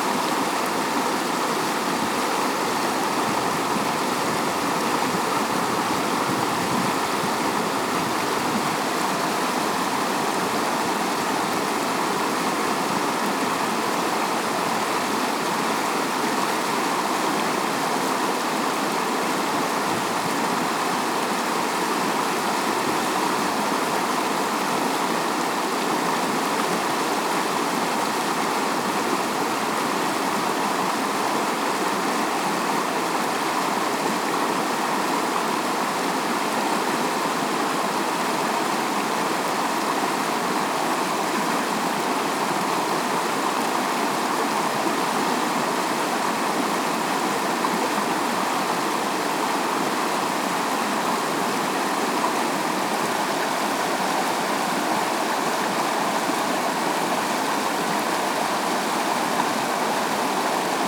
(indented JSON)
{
  "title": "Griffith Park, Dublin, Co. Dublin, Ireland - Tolka at Large Weir",
  "date": "2015-05-13 11:00:00",
  "description": "Bealtaine workshops with older people exploring the soundscape and landscape of the River Tolka as it flows through Griffith Park in Drumcondra, Dublin. Recordings were made through a series of walks along the river. The group reflected on these sounds through drawing and painting workshops in Drumcondra library beside the park.",
  "latitude": "53.37",
  "longitude": "-6.26",
  "altitude": "11",
  "timezone": "Europe/Dublin"
}